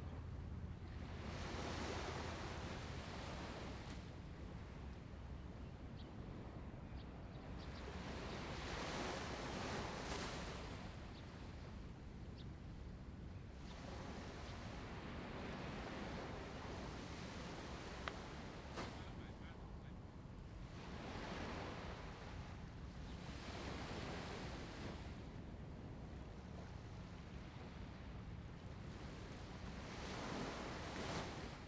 隘門海灘, Penghu County - In the beach
In the beach, Sound of the waves
Zoom H2n MS +XY
Penghu County, Husi Township, 澎20鄉道, 21 October, 08:23